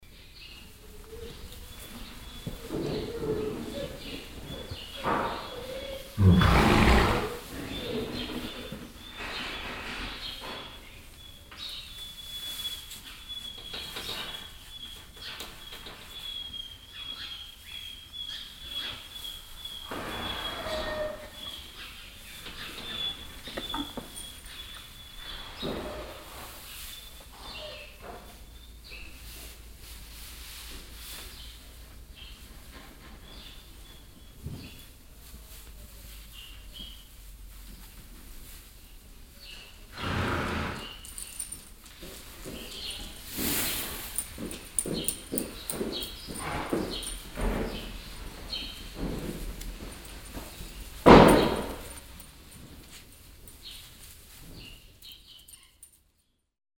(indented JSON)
{
  "title": "Düsseldorf, Bauernhäuser Weg, Pferdestall",
  "date": "2008-06-16 17:34:00",
  "description": "Pferdehof am Segelflughafen Düsseldorf, Hubbelrath, im Stall, Hundewimmern, Pferdehufe\n- soundmap nrw\nproject: social ambiences/ listen to the people - in & outdoor nearfield recordings",
  "latitude": "51.27",
  "longitude": "6.85",
  "altitude": "110",
  "timezone": "Europe/Berlin"
}